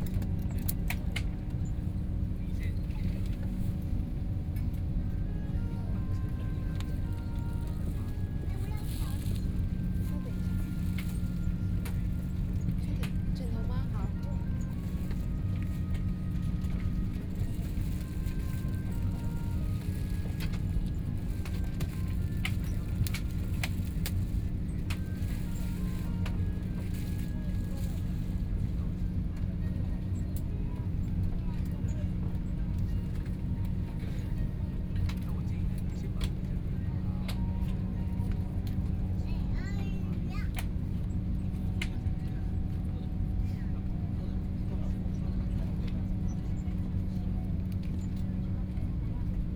Hongqiao Airport, Shanghai - Inside the plane
Inside the plane, Aircraft interior voice broadcast message, Binaural recording, Zoom H6+ Soundman OKM II